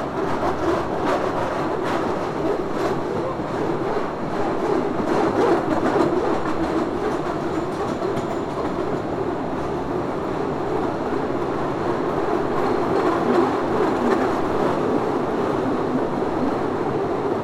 This beutiful train ride is like an Indiana jones adventure, that is nice to listen to, and truely beautiful to expirience. I Daniel was siting in front with my tascam recorder.